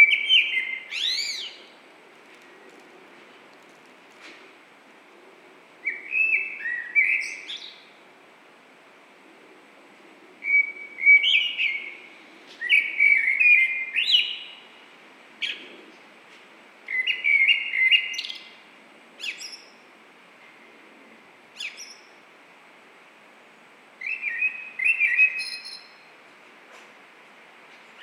Kemptown, Brighton, UK - Blackbird
Captured this behind the University of Brighton's Edward Street location with a Zoom H6 XY pair.
23 February 2016, ~18:00